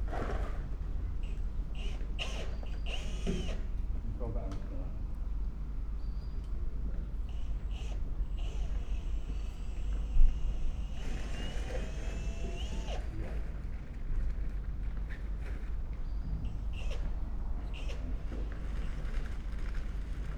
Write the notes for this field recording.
Recorded as I slowly wander around the station on a quiet day. Workmen are refurbishing the old victorian canopy over the platform. A few people talk. 2 trains arrive and leave. MixPre 6 II with 2 Sennheiser MKH 8020s